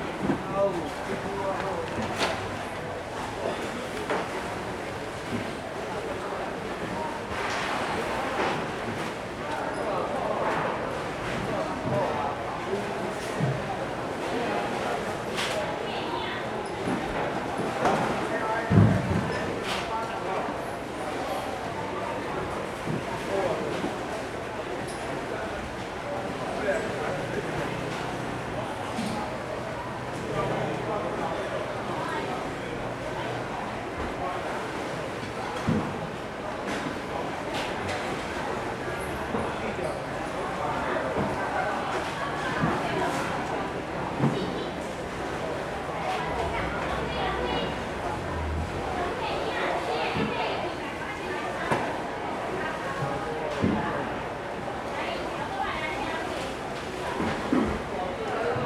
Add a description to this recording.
Fruits and vegetables wholesale market, Removal packing, Sony Hi-MD MZ-RH1 +Sony ECM-MS907